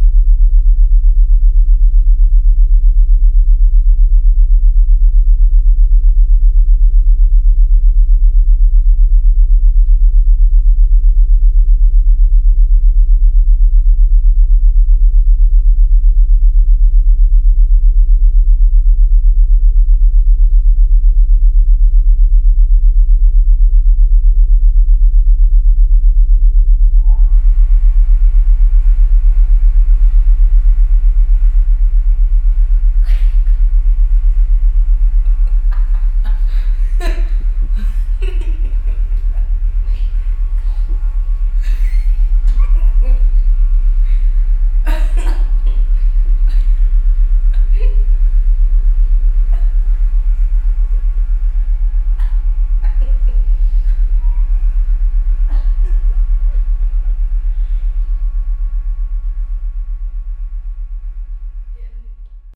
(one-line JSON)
{"title": "fröndenberg, niederheide, garage of family harms", "date": "2010-04-09 17:11:00", "description": "third recording of the sound and light installation of finnbogi petursson in the garage of the family harms - here interrupted by spontaneous laughter of the families son.", "latitude": "51.48", "longitude": "7.72", "altitude": "161", "timezone": "Europe/Berlin"}